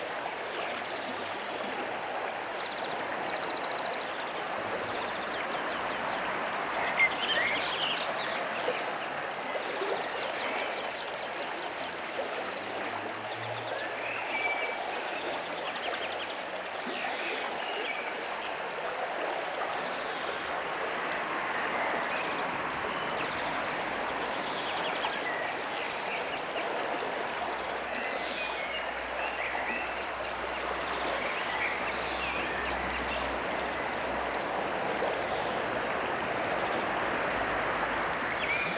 Klosterberg, Bad Berka, Deutschland - Beneath the Ilm Bridge #4
*Recording technique: inverted ORTF.
vociferous tones and textures
Recording and monitoring gear: Zoom F4 Field Recorder, RODE M5 MP, Beyerdynamic DT 770 PRO/ DT 1990 PRO.